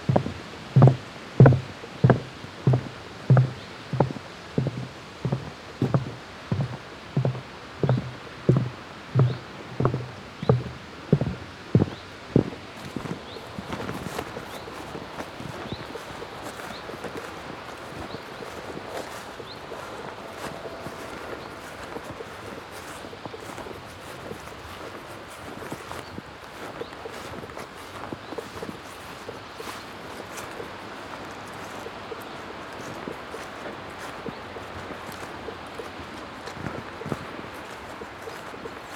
Ulflingen, Luxemburg - Nature path Cornelys Millen, wooden pathway
Auf den Naturpadweg Cornelys Mllen auf einem geschwungenen Weg mit Holzbohlen der hier über und durch ein Feuchtbiotop führt das von zwei Gattern begrenzt ist. Der Klang der Schritte auf den Holzbohlen.
On the nature path way Cornelys Millen on a curved path with wooden planks that leads over and through a wetland biotope. The sound of the steps on the planks.